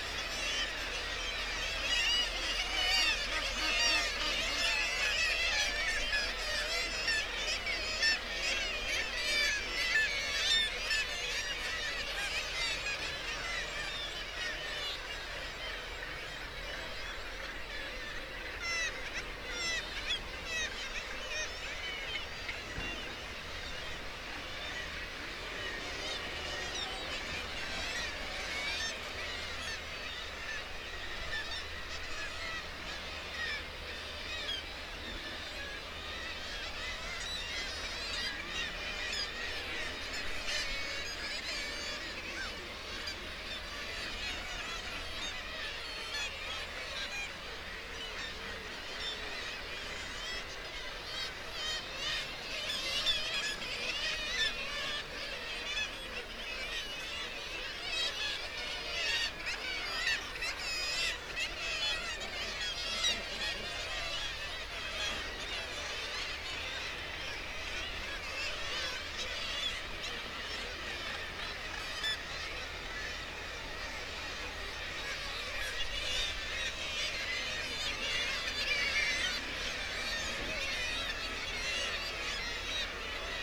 Bempton, UK - Kittiwake soundscape ...
Kittiwake soundscape ... RSPB Bempton Cliffs ... kittiwake calls and flight calls ... guillemot and gannet calls ... open lavaliers on the end of a fishing landing net pole ... warm ... sunny morning ...
Bridlington, UK, 22 July 2016, 05:11